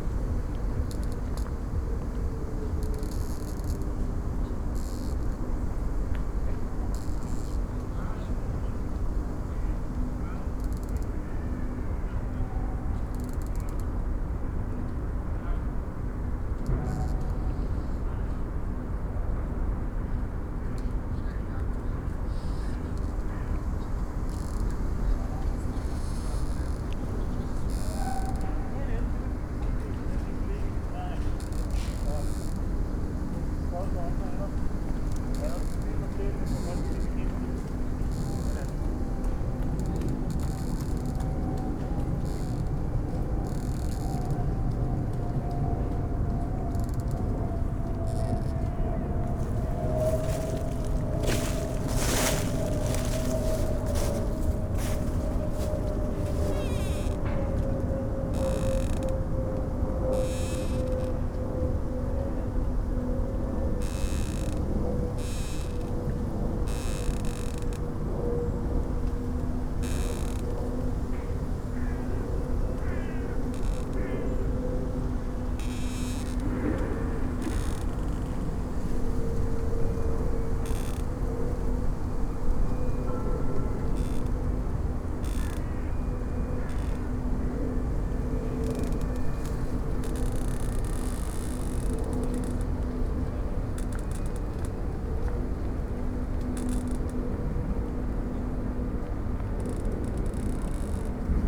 Berlin, Plänterwald, Spree - moving, various sounds
moving around. various sounds around this spot: the power plant, a squeeking tree, pedestrians and joggers, the rusty big wheel of the abandoned spreepark funfair.
(tech note: SD702 DPA4060 binaural)